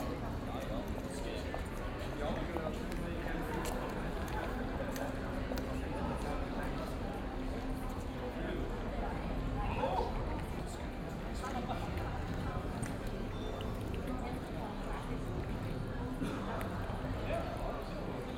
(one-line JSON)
{"title": "Aarau, Kronengasse, Evening, Schweiz - Kronengasse", "date": "2016-06-28 19:50:00", "description": "After Kirchplatz back in the streets the walk continues through the Kronengasse, where again some people in restaurants chat", "latitude": "47.39", "longitude": "8.04", "altitude": "386", "timezone": "Europe/Zurich"}